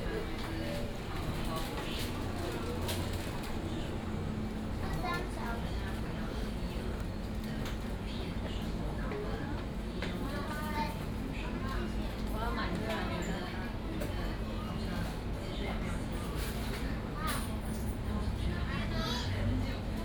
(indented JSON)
{
  "title": "Sec., Xinhai Rd., Da'an Dist. - Walking on the road",
  "date": "2015-06-28 17:32:00",
  "description": "Walking across the road, Then go into the convenience store",
  "latitude": "25.02",
  "longitude": "121.54",
  "altitude": "30",
  "timezone": "Asia/Taipei"
}